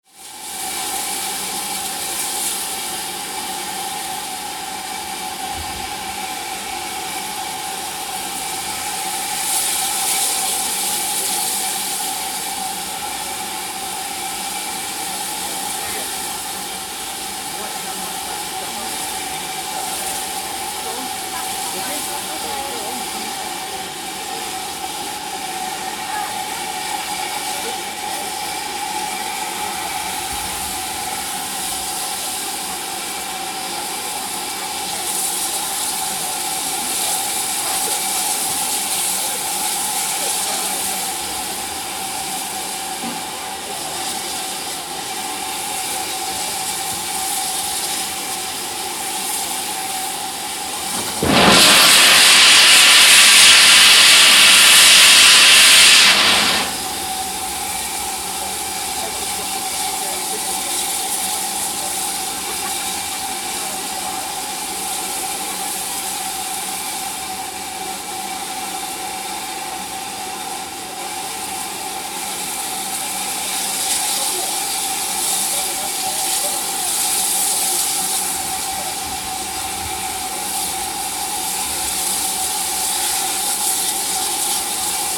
5.20pm train departing Swanage for Norden. Recorded using the on-board microphones of a DR-05 with windshield.